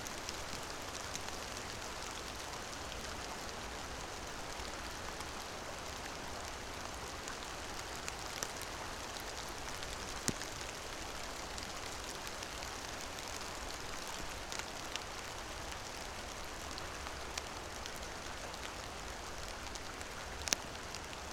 Pačkėnai, Lithuania, rain in the forest
sitting in the forest. drizzle.
Utenos apskritis, Lietuva, 18 February 2020